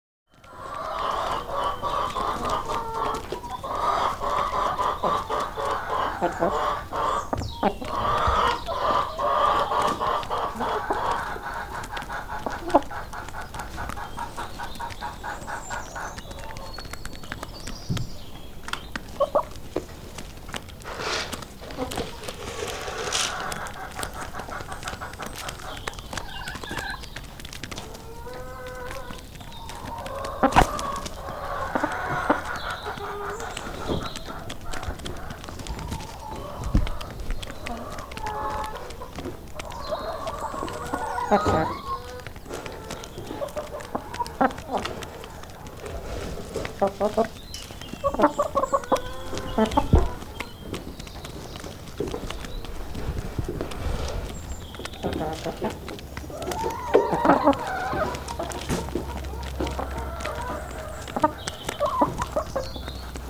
Recorded inside the chicken coop while the birds wander about pecking food and socialising. I used 2x Sennheiser MKH 8020s and a Mix Pre 6 II .
England, United Kingdom, 6 April, ~12:00